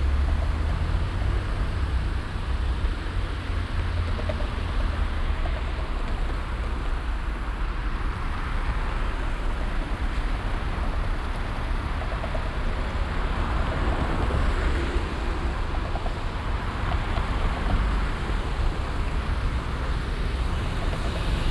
cologne, barbarossaplatz, bf verkehrsfluss roonstrasse - ring - koeln, barbarossaplatz, verkehrsfluss roonstrasse - ring
strassen- und bahnverkehr am stärksten befahrenen platz von köln - aufnahme: morgens
soundmap nrw: